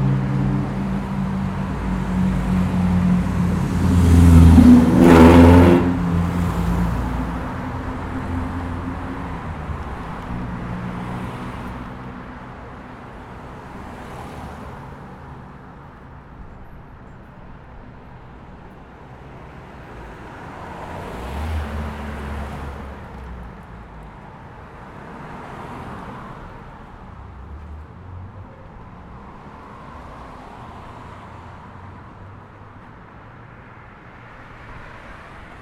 W Colorado Ave, Colorado Springs, CO, USA - Michael Garman Museum - former Pikes Peak Bank of Commerce
Zoom H4n Pro, dead cat used.